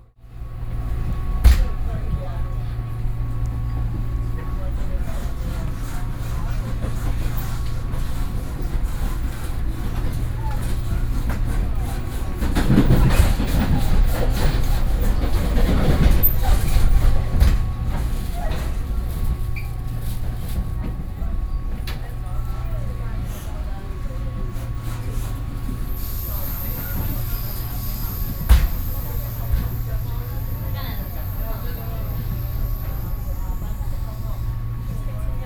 Gongliao Dist., New Taipei City - inside the Trains